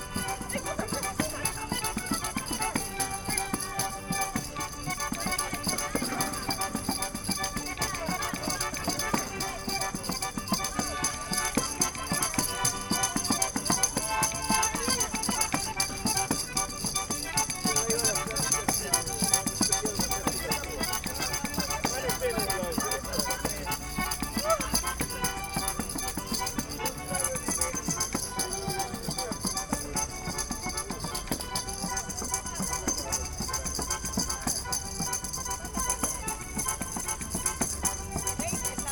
MediaPark, Cologne, Germany - musicians and reflections
two probably italian musicians playing in front of the hotel Mediapark Köln, percussive sounds reflecting at the walls.
(Sony PCM D50, DPA4060)
27 August 2013, ~22:00